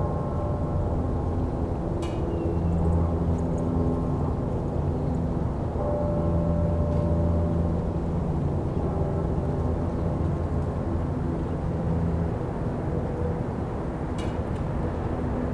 Allentown, PA, USA - North Muhlenberg Street
A recording of the traffic that is commonplace to Tilghman Street.